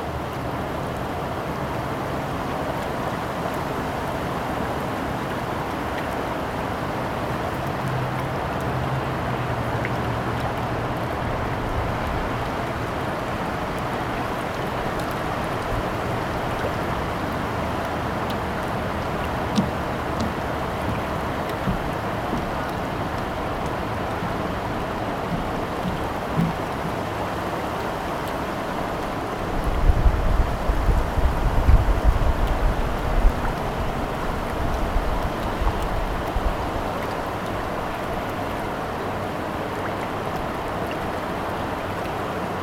Cedar Creek Park, Parkway Boulevard, Allentown, PA, USA - Bridge over the river Muhlenberg
This is one of the most peaceful spots on campus - a tree covered bridge over the flowing waters coming from the waterfall. From here you can see the entire lake at just below eye level, and the microphone is placed in such a way as to capture both the roar of the waterfall and the gentle trickle of the river.
4 December 2014, 15:45